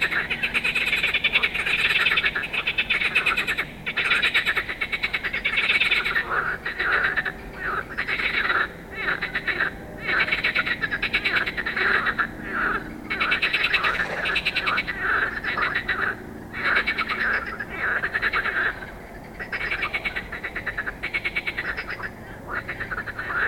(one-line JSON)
{"title": "Ziekebeemdenstraat, Mechelen, België - Vrouwvlietkikkers", "date": "2020-05-27 22:34:00", "description": "Frogs in the night, Zoom H4n Pro", "latitude": "51.04", "longitude": "4.49", "altitude": "4", "timezone": "Europe/Brussels"}